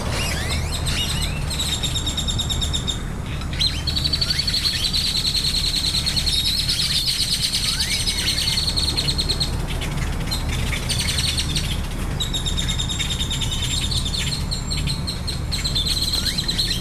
outside whole foods
loud, birds, trees, traffic
Texas, United States of America